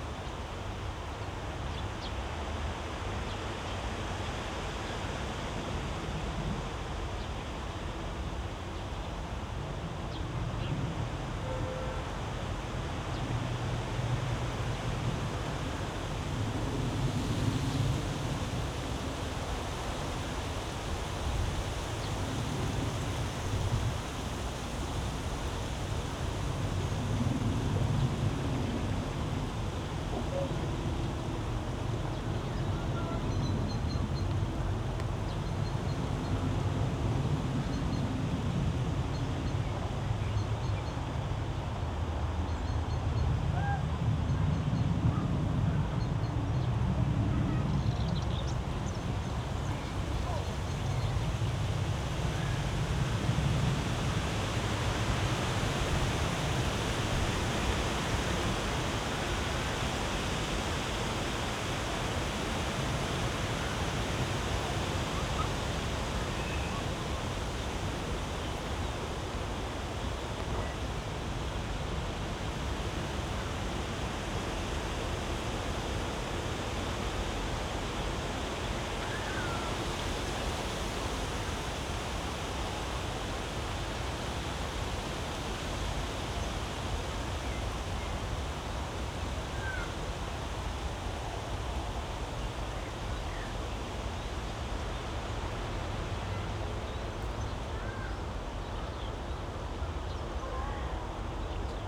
place revisited: spring Sunday early evening
(SD702, AT BP4025)
Berlin, Germany, 10 May 2015